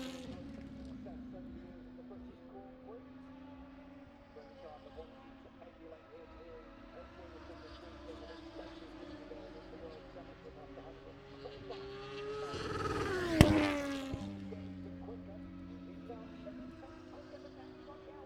Silverstone Circuit, Towcester, UK - british motorcycle grand prix ... 2021
moto two free practice one ... maggotts ... dpa 4060s to MixPre3 ...